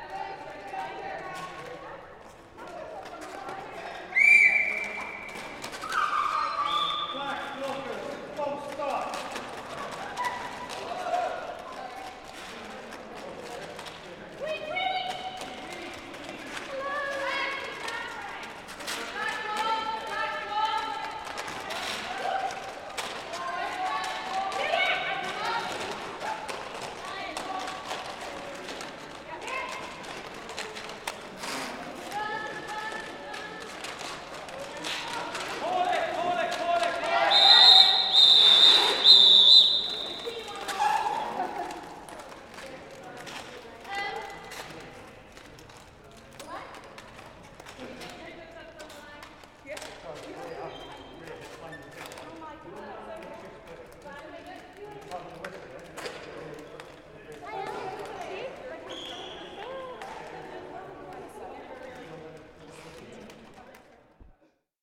Blackbird Leys Leisure Centre, Oxford, UK - Oxford Roller Derby
This is the sound of Oxford Roller Derby practicing. The team is nearly all-female and I have been interviewing comrades within it about their relationship to sportswear and the fashions associated with this sport for my project, Fabric of Oxford. It is a fantastically violent contact sport and I was really interested to hear how much attraction this holds for women in particular.